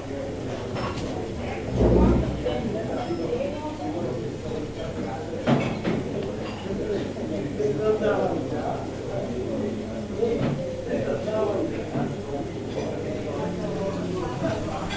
Osteria am Platz
Osteria, Kasse, und Café, Bar